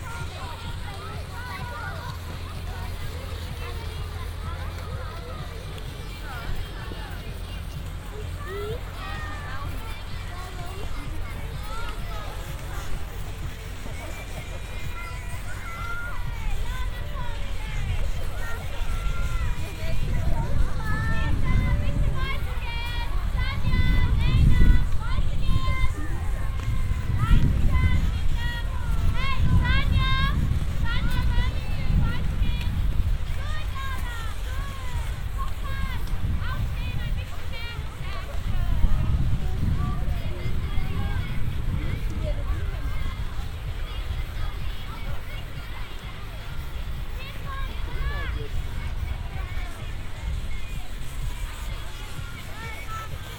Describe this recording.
A big area for childs in different ages to learn ski. The sound of several moving carpet elevators to get uphill while standing - The church bell in the distance - later the sound of music and announcements in austrian language of a ski race of an youngster ski group. Unfortunately some wind disturbances. international sound scapes - topographic field recordings and social ambiences